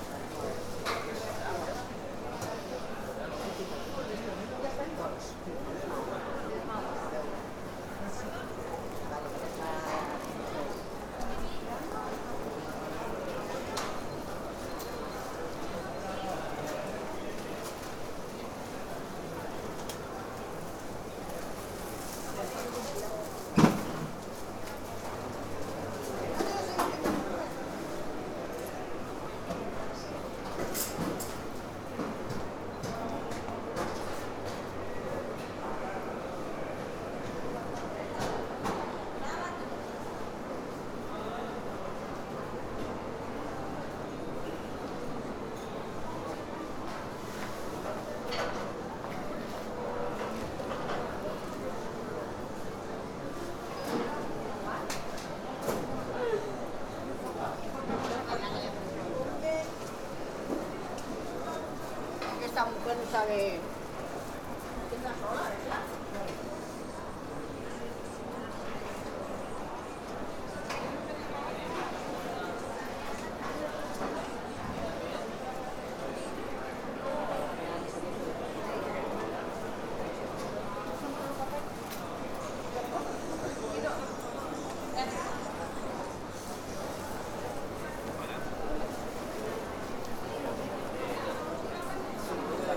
Mercat de Santa Caterina
Market with a Gaudinian style structure.
Barcelona, Spain, January 2011